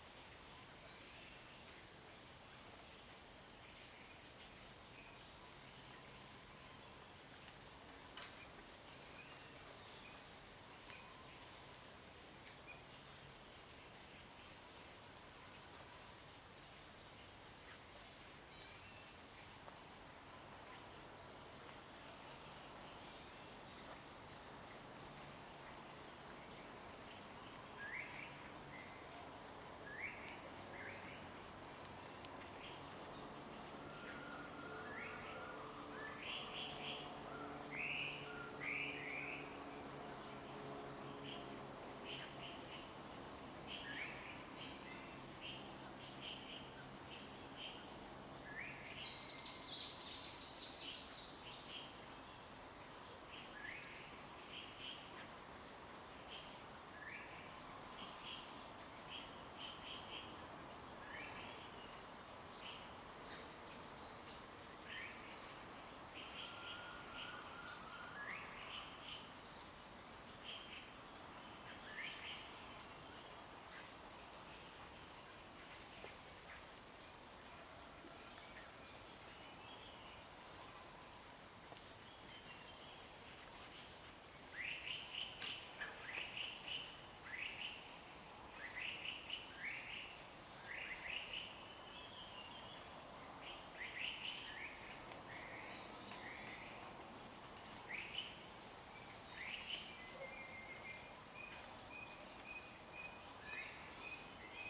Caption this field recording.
Morning in the mountains, Bird sounds, Traffic Sound, Frogs chirping, Zoom H2n MS+XY